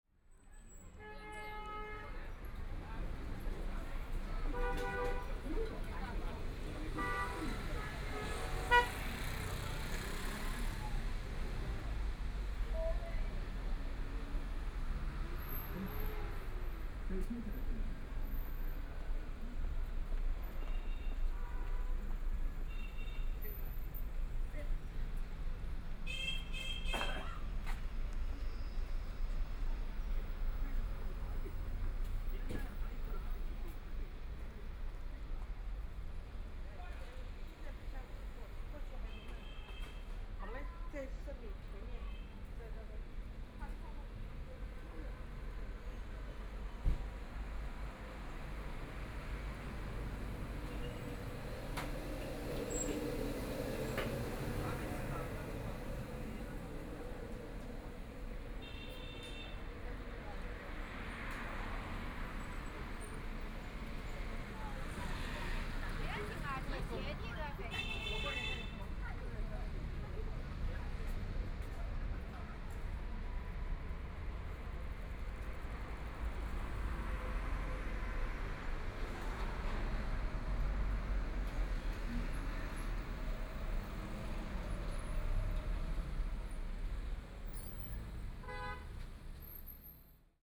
Walking the streets in the small community, Homes and shops on the street, Pedestrians, Traffic Sound, Binaural recording, Zoom H6+ Soundman OKM II ( SoundMap20131126- 31)
Huangpu, Shanghai, China, 26 November 2013